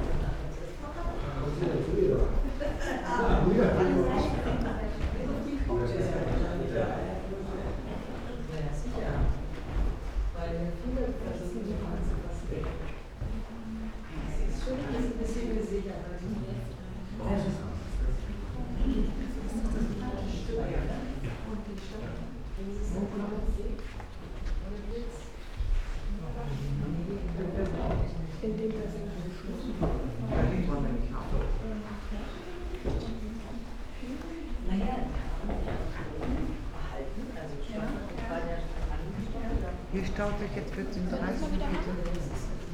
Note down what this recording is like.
slow walk through rooms with different kind of wooden floors and parquet, aroundgoers and their steps, whisperings, plastic raincoats and plastic bags for umbrellas